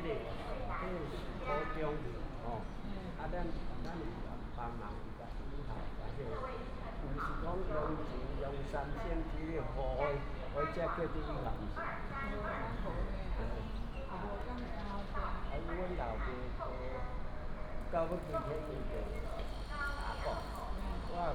新烏日車站, Wuri District - walking in the Station
walking in the Station, From the station hall to the platform
Taichung City, Taiwan, 27 February, 08:58